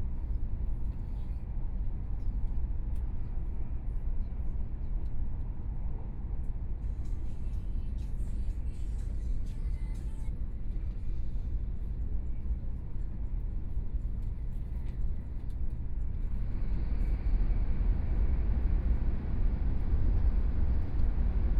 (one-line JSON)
{"title": "Yangmei City, Taoyuan County - Taiwan High Speed Rail", "date": "2014-01-30 19:15:00", "description": "Taiwan High Speed Rail, from Taoyuan Station to Hsinchu Station, Binaural recordings, Zoom H4n+ Soundman OKM II", "latitude": "24.90", "longitude": "121.08", "timezone": "Asia/Taipei"}